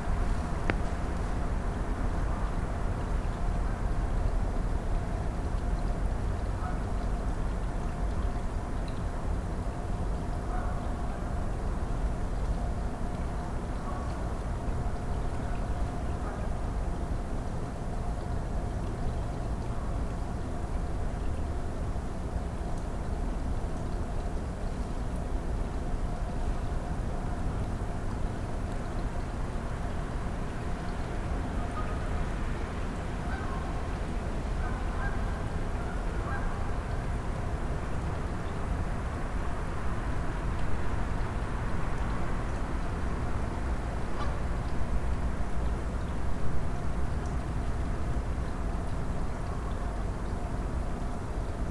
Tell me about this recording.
Recorded using Audio-Technica USB Microphone.